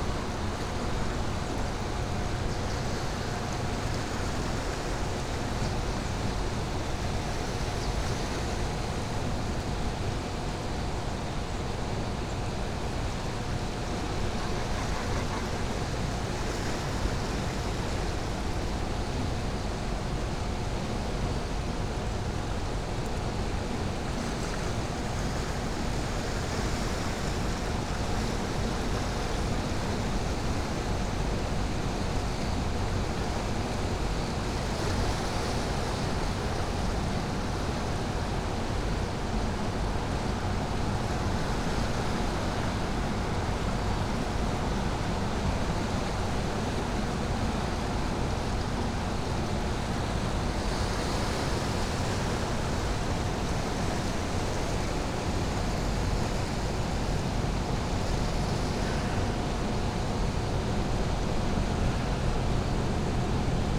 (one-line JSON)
{"title": "Uiam hydroelectric dam X Egrets, Grey Herons, Cormorants", "date": "2019-09-13 15:00:00", "description": "Egrets, Grey Herons and Cormorants gather at safe perches at the foot of Uiam hydroelectric dam...overlapping sounds of 1. the electricity distribution lines 2. water flow through the dam 3. bird calls and even the sound of their wing beats slapping the surface of the river as they take flight...", "latitude": "37.84", "longitude": "127.68", "altitude": "86", "timezone": "Asia/Seoul"}